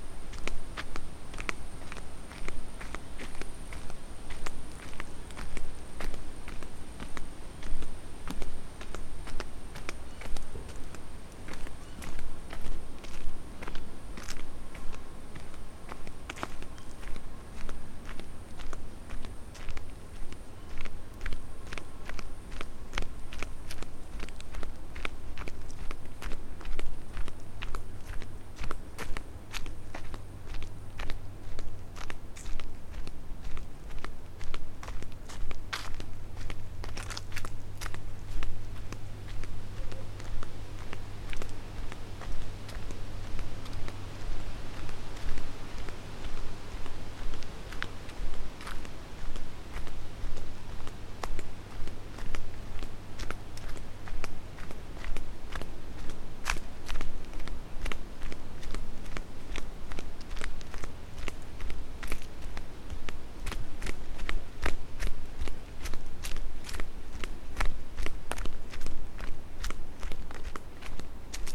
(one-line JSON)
{"title": "walk, Piramida, Maribor, Slovenia - walk", "date": "2012-08-24 20:34:00", "description": "descent walking, Piramida, twilight forest ambience", "latitude": "46.58", "longitude": "15.65", "altitude": "315", "timezone": "Europe/Ljubljana"}